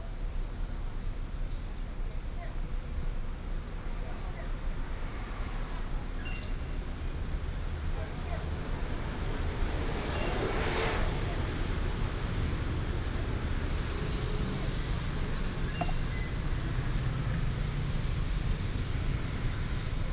蘆竹區公所站, Luzhu Dist., Taoyuan City - At the bus station
At the bus station, Birds sound, traffic sound